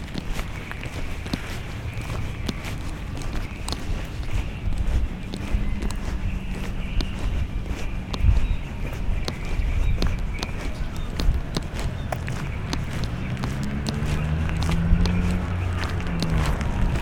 Kota Bharu, Kelantan, Malaysia - Walk to Friday Bird Singing Contest
Excerpts from a walk along Jl Kebun Sultan and Jl Sri Cemerlang to the park where weekly Bird Singing Contests are held